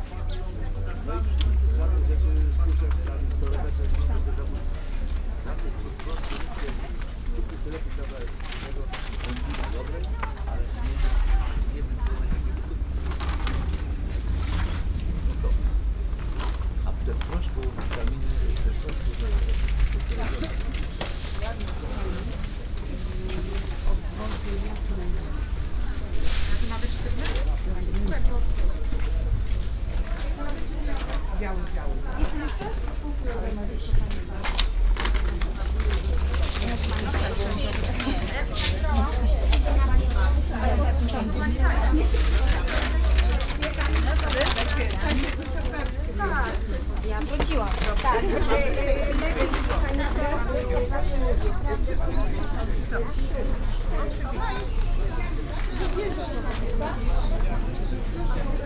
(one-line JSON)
{"title": "Poznan, Sobieskiego housing estate - produce market", "date": "2015-07-11 10:20:00", "description": "(binaural) walking around stalls. lots of customers shopping on a local market on Saturday morning. vendors touting their goods, joking with customers. you are hearing a lot of people talking with the influence of Poznan dialect. It's especially strong among the elderly. Very distinctive dialect and can be heard basically only in Poznan.", "latitude": "52.46", "longitude": "16.91", "altitude": "99", "timezone": "Europe/Warsaw"}